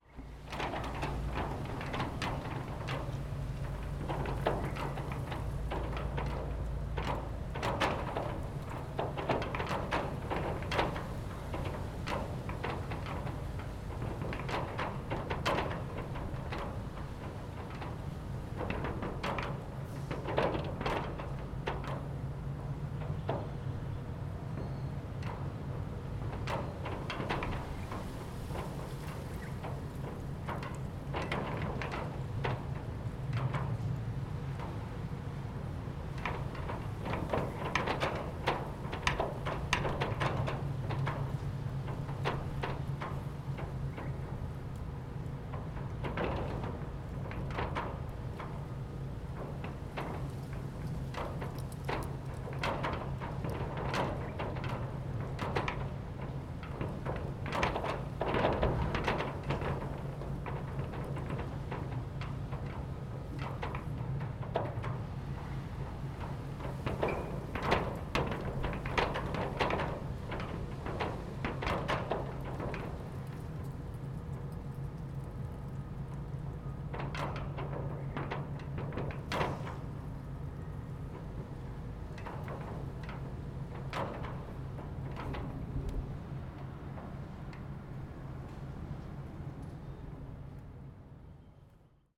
Heard this roller door rattling in the wind, while hunting sounds on my lunch break. Very windy in Fremantle today, so hard to get a recording not molested by it!
Recorded with Zoom h2n with windjammer, XY mode, with ATH-m40x headphones.
North Fremantle WA, Australia, 6 December 2017